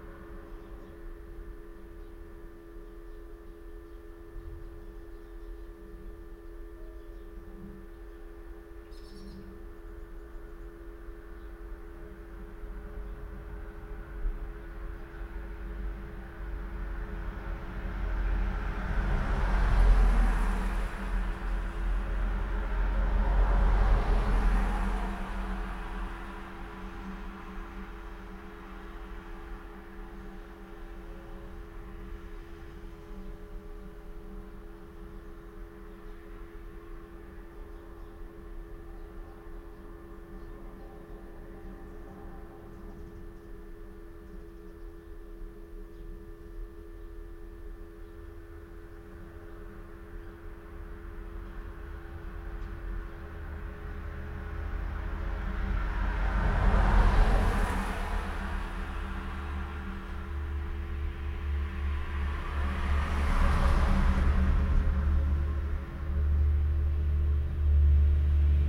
Post Box, Malton, UK - inside the telephone box ...
inside the telephone box ... the kiosk is now defunct ... bought for a £1 ... houses a defibrillator ... that produces the constant low level electrical hum ... and a container for newspapers ... recorded with Olympus LS 14 integral mics ... passing traffic etc ...
England, United Kingdom, 1 January 2020